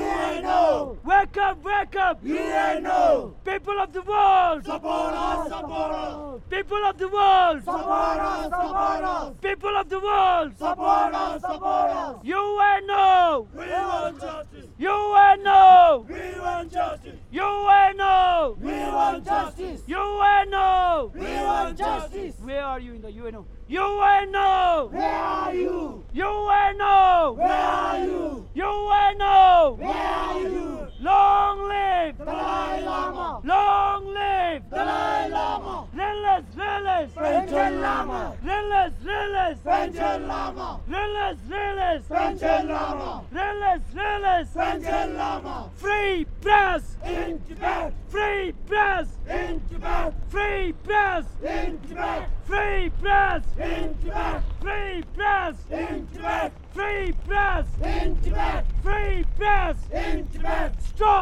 Leh - Ladak - Inde
Dans l'une des rues principales du centre ville, je croise une manifestation revendiquant le Tibet Libre !" (Free Tibet !)
Fostex FR2 + AudioTechnica AT825
Leh District, Ladakh, India, 10 May 2008, ~12:00